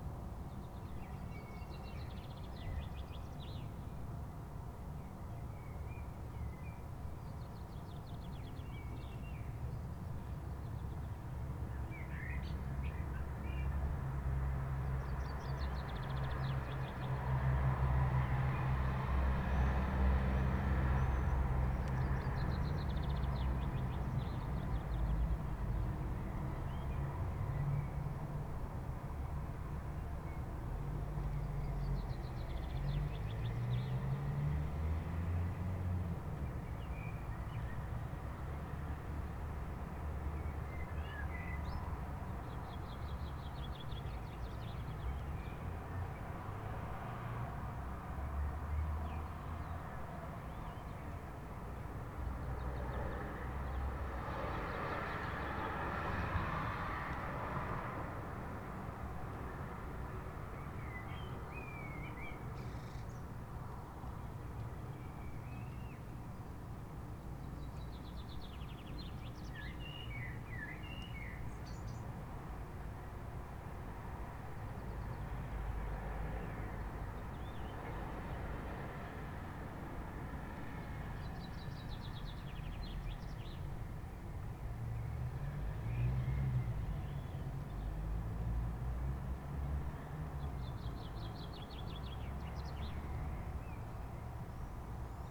wermelskirchen, berliner straße: terrasse - the city, the country & me: terrasse
stupid friday evening traffic, birds
the city, the country & me: june 17, 2011
Wermelskirchen, Germany, 17 June 2011